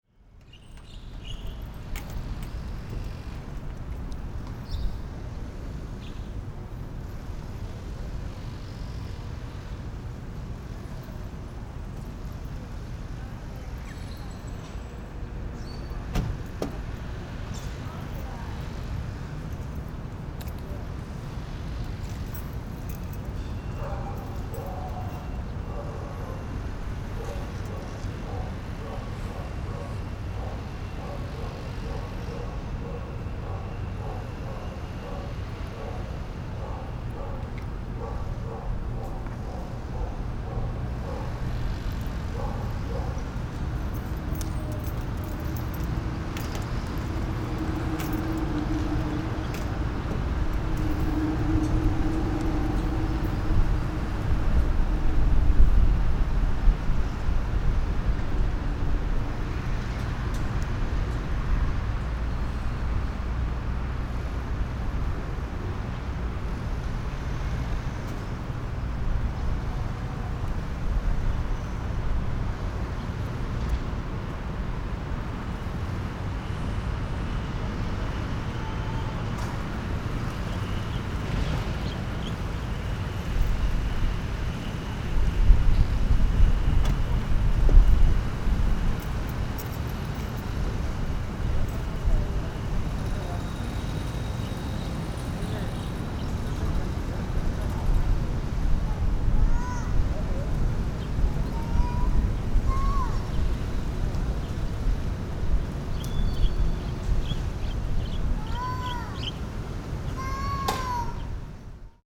Cianjhen District, Kaohsiung - Afternoon
in the Small community park, Sony PCM D50
高雄市 (Kaohsiung City), 中華民國, 5 April 2012, 14:41